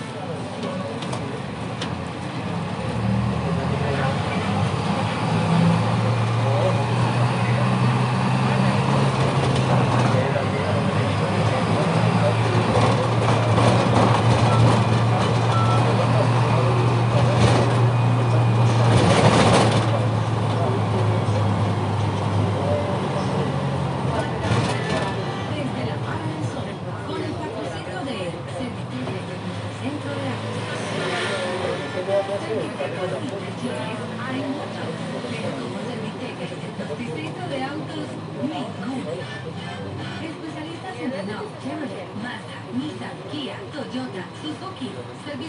Cra., Itagüi, Antioquia, Colombia - Bus integrado Calatrava
Bus integrado metro Calatrava
Sonido tónico: Música, avisos publicitarios, conversaciones, motor
Señal sonora: Motos
Tatiana Flórez Ríos - Tatiana Martínez Ospino - Vanessa Zapata Zapata
9 November 2021